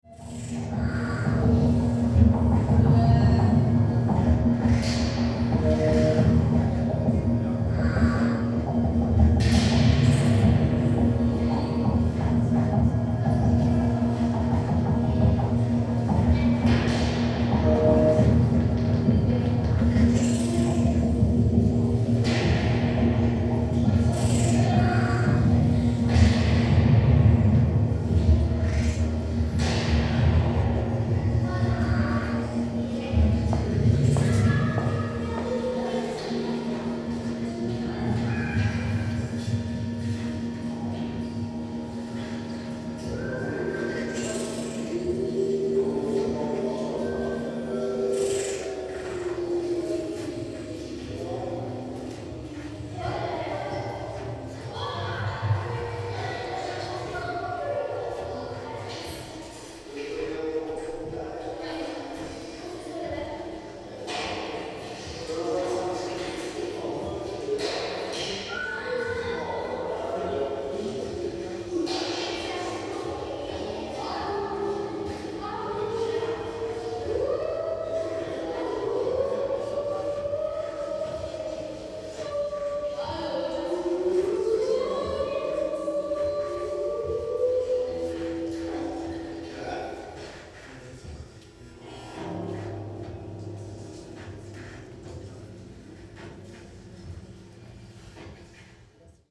köln - performance by f.schulte
perfomance by frank schulte at K22, during the plan09 event at Körnerstr., Köln. Frank uses sounds from the radio aporee map for his artwork.